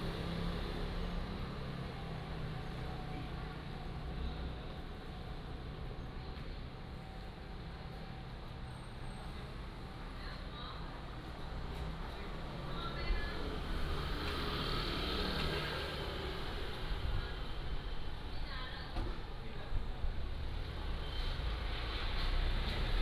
Guozhong Rd., Jinsha Township - In front of convenience stores
Small towns, In front of convenience stores, Traffic Sound